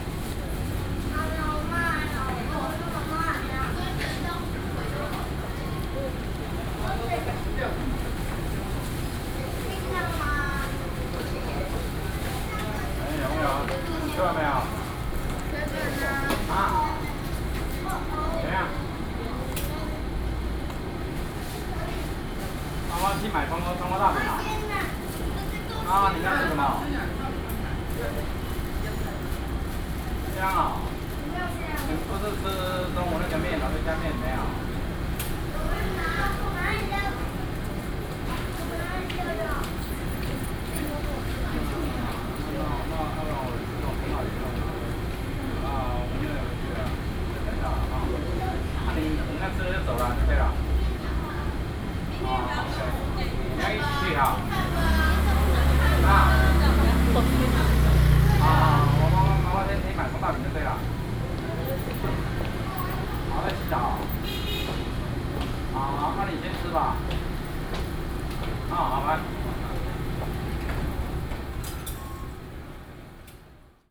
Ln., Sec., Xinsheng S. Rd. - in front of the Convenience store
in front of the Convenience store, Small alley, The old woman was calling from a public phone
Sony PCM D50 + Soundman OKM II
9 June 2012, 19:12